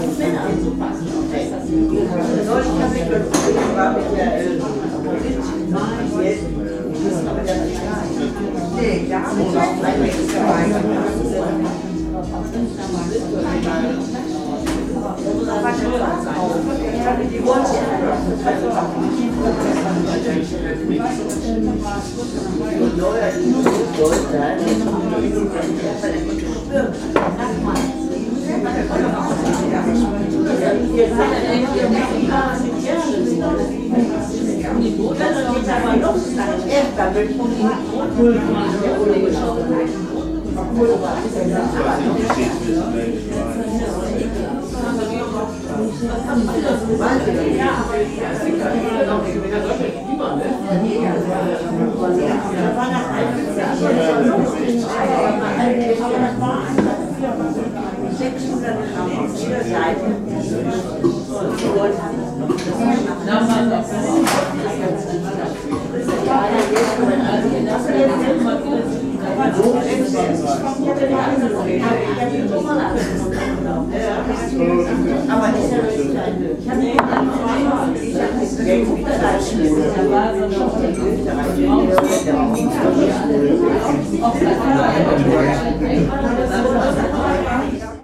friesenstube, frohnhauser str. 387, 45144 essen